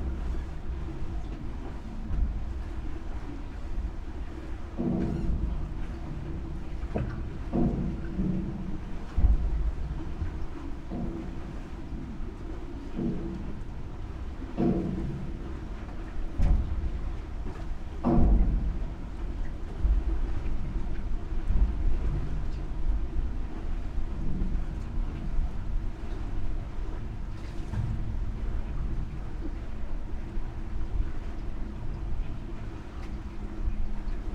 Sang Jung-do disused ferry wharf - Sang Jung-do disused ferry wharf （상中島 부두）
these small islands in Chuncheon lake arenow connected by a new bridge system...the former ferry services have been made redundant...one passenger ferry remains tethered to this wharf...recorded first from ferry side then from the boat side...some turbulence in the recording, nonetheless the low frequency knocking of the boat and pier are of interest...
17 March 2019, 15:00